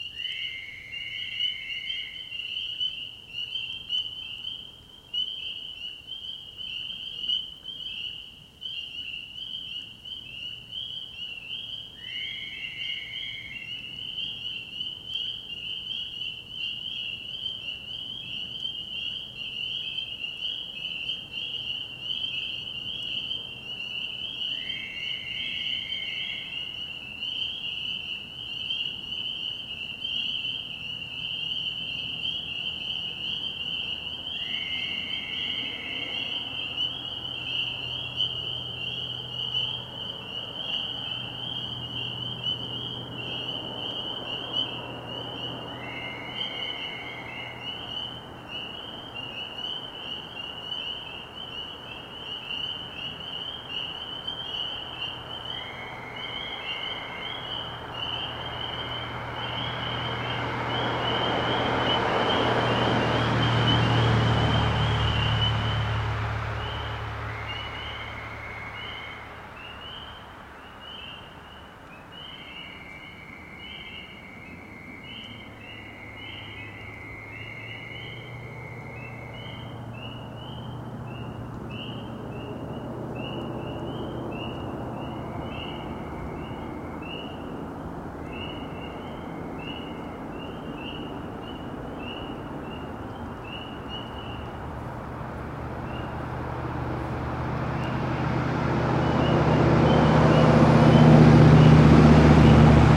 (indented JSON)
{
  "title": "Downe, NJ, USA - toad road",
  "date": "2017-04-12 21:00:00",
  "description": "roadside recording featuring spring peepers and Fowler's toads",
  "latitude": "39.33",
  "longitude": "-75.08",
  "altitude": "22",
  "timezone": "America/New_York"
}